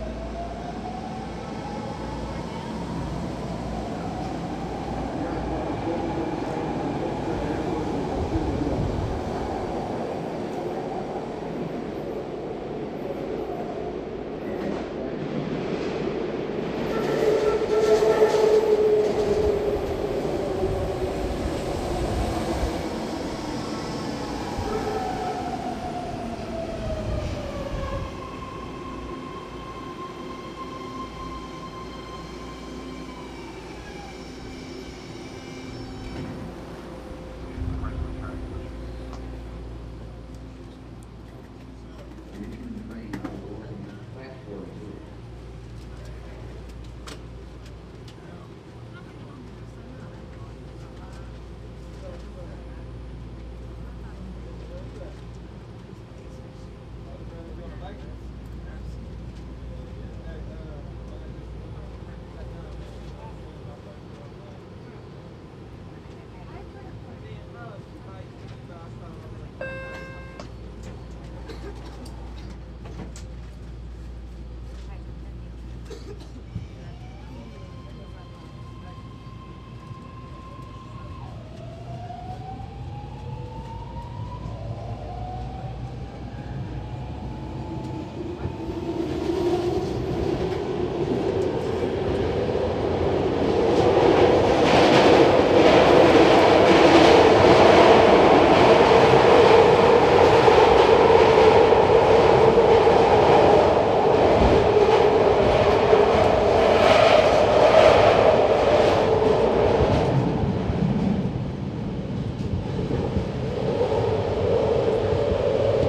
San Francisco, Embarcadero Bart + ride towards the East Bay
San Francisco, Embarcadero Bart station, taking subway towards the West Oakland Bart Station, under the San Franciscan bay
San Francisco, CA, USA